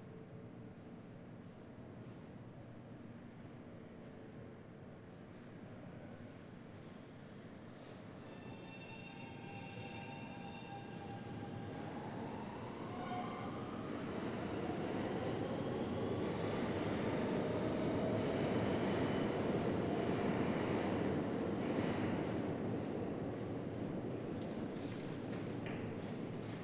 Delancey St, New York, NY, USA - Commuting during COVID-19
Delancey Street/Essex Street train station.
This station connects F, J, and M train lines with people commuting to work from Brooklyn, Queens, and Coney Island. As a result, this station tends to be very crowded, especially during rush hour. This recording captures the soundscape of the station at 6:40 am (close to rush hour) emptied of people due to the Covid-19 quarantine.
Zoom h6